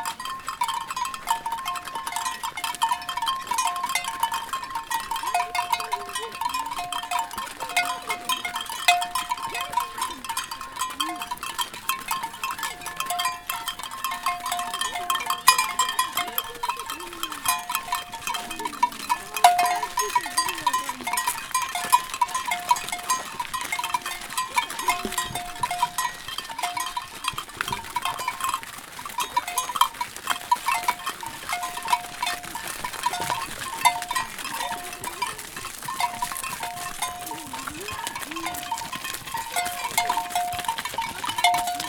Zongwe, Sinazongwe, Zambia - Cows go home...
returning home from Zongwe FM studio, i've a surprise encounter with a herd of cows being chased home by a boy... i rush to get out a recorder... and just about manage making a recording... though with some handling sounds...
here's a recording at Choma street market, where i discover the bells being sold by a trader...